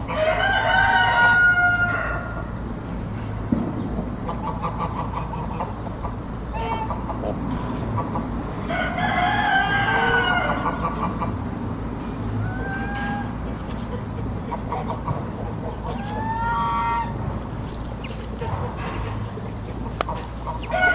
Via 1° Maggio, Bernate VA, Italia - Oche e un gallo presso un ruscello